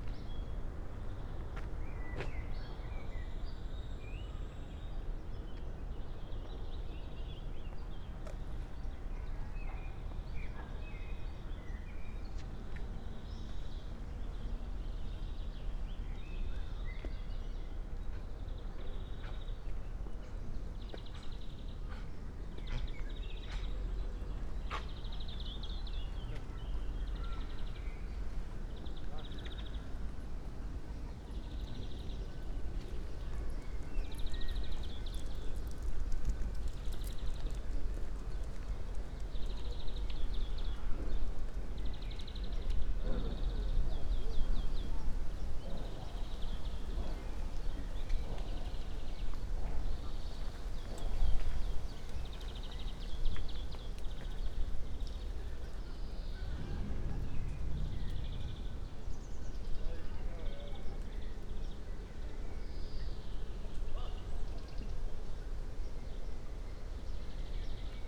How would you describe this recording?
short walk over the cemetery of Esch-sur-Alzette, (Sony PCM D50, Primo EM172)